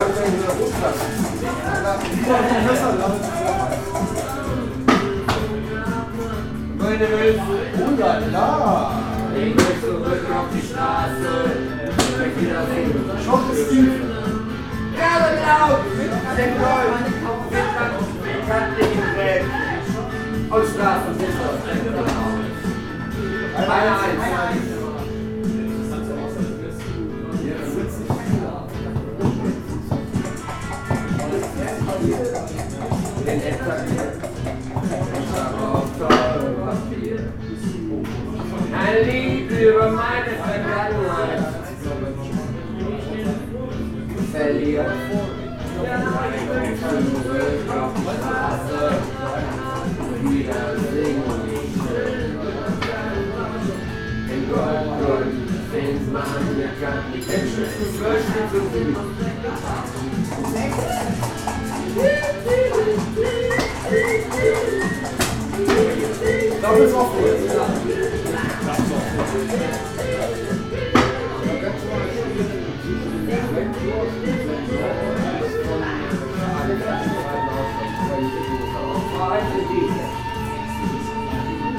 Ostviertel, Essen, Deutschland - panoptikum
panoptikum, gerlingplatz 4, 45127 essen